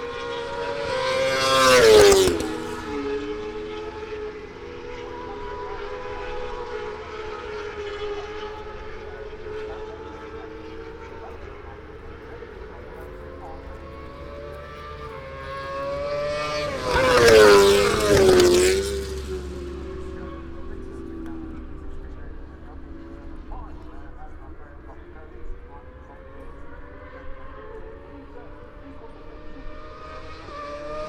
British Motorcycle Grand Prix 2018 ... moto two ... free practic three ... maggotts ... lvalier mics clipped to sandwich box ...
Towcester, UK, 25 August 2018